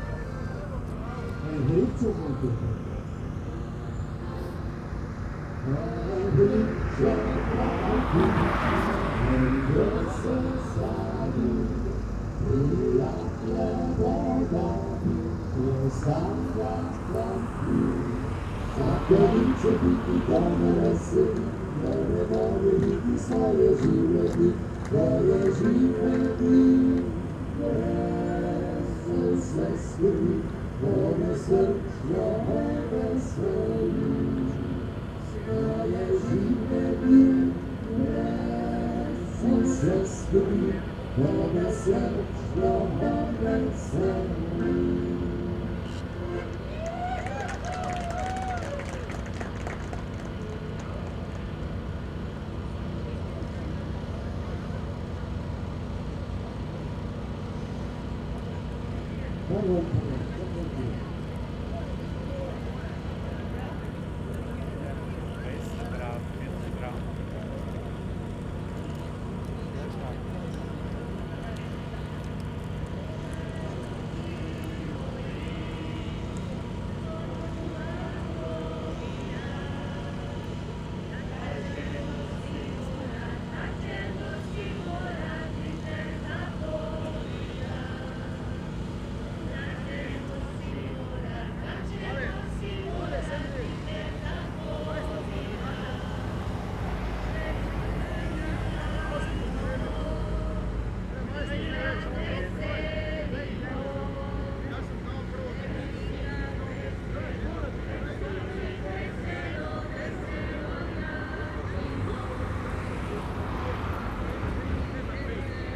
Maribor, Vojasniska ulica, at the river Drava - slowly passing boat and music
a strange boat, or rather a big raft, with many older people and a band is passing very slowly. the eband plays folk music, the people start to sing, the rudders are squeaking.
(SD702, DPA4060)
Maribor, Slovenia